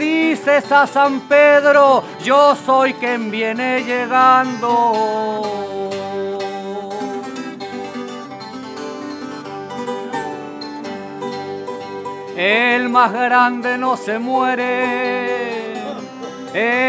Subida Ecuador, Valparaiso, Chile - Singing during a funeral, during wake of Jorge Montiel (Valparaiso, Chile)
During the wake of the death Jorge Montiel, Manuel Sánchez Payador sing to his memory, improvising in "décimas".
Voices of the people around in background.
Recorded in Valparaiso, Chile, during a residency at Festival Tsonami 2015.
Recorded by a MS Setup Schoeps CCM41+CCM8
In a Cinela Leonard Windscreen
Sound Devices 302 Mixer and Zoom H1 Recorder
Sound Reference: 151121ZOOM0015
Región de Valparaíso, Chile, 21 November